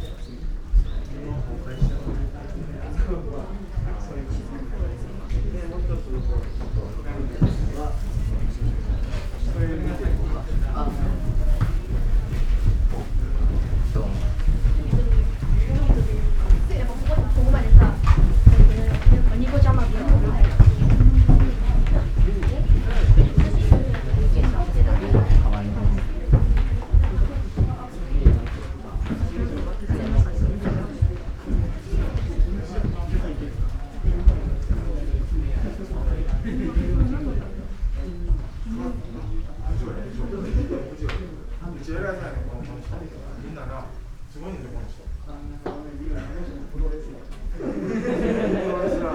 veranda, Ryoanji garden, Kyoto - six red silent buckets
gardens sonority
wooden floor